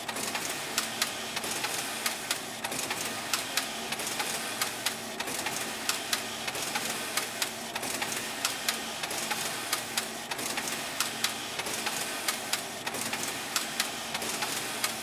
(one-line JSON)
{"title": "Shetland College UHI, Gremista, Lerwick, Shetland Islands, UK - Shima machines working full tilt", "date": "2013-08-06 12:35:00", "description": "The University of Highlands and Islands has amazing resources, including state of the art computers, conferencing facilities, looms, knitting machines etc. There is also a room containing massive shima machines which are utilised not only by the college goers, but by small and local businesses also. Shima machines are enormous Japanese knitting machines, which can churn out miles of complex and extremely fine knitted fabric in a staggering array of patterns and styles. Unlike the domestic knitwear machines which formed the backbone of the Shetland knitwear industry in the mid-twentieth century, the Shima machines have all their moving parts concealed inside a giant steel and glass housing. The shima machines are several metres long and over a metre tall, and are controlled via computer interfaces. Pieces are created inside the shima machines, and then linked together by the extremely skilled linkers who work in this division of the University.", "latitude": "60.17", "longitude": "-1.16", "timezone": "Europe/London"}